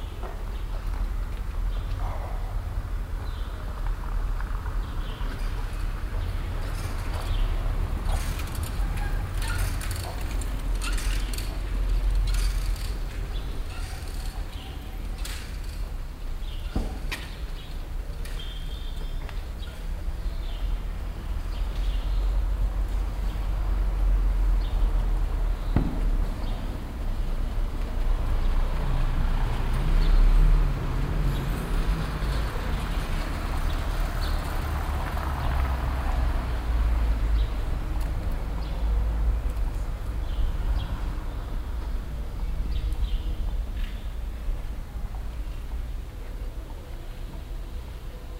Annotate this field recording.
soundmap: cologne/ nrw, atmo in kleiner kopfsteinbedeckter strasse, morgens - tauben, fahrradfahrer, fahrzeuge, stimmen, project: social ambiences/ listen to the people - in & outdoor nearfield recordings